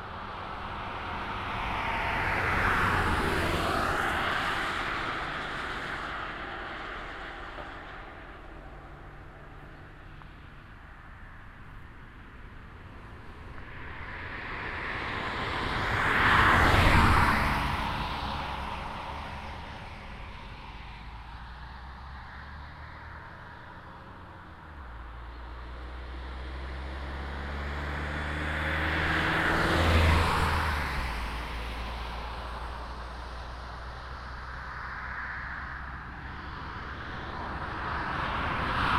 lipperscheid, E421, traffic
At the regional highway E421 on a Saturday evening. The sound of different kind of cars and a motorbike passing by in both directions of the street.
Lipperscheid, E421, Verkehr
Auf der Regionalstraße E421 an einem Samstagabend. Verschiedene Geräusche von Autos und ein Motorrad, die in beide Richtungen der Straße fahren.
Lipperscheid, E421, trafic
Sur la route régionale E421, un samedi soir. Différents bruits d’automobiles et une moto qui passent sur la route dans les deux sens.
Project - Klangraum Our - topographic field recordings, sound objects and social ambiences